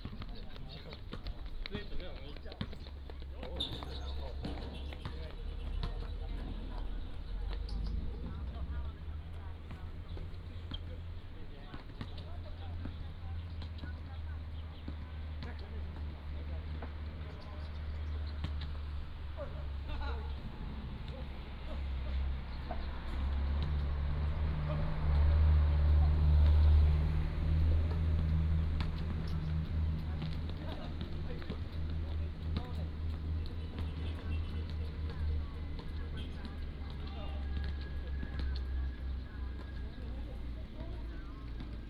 In the playground, Many soldiers are doing sports
福建省 (Fujian), Mainland - Taiwan Border, 13 October 2014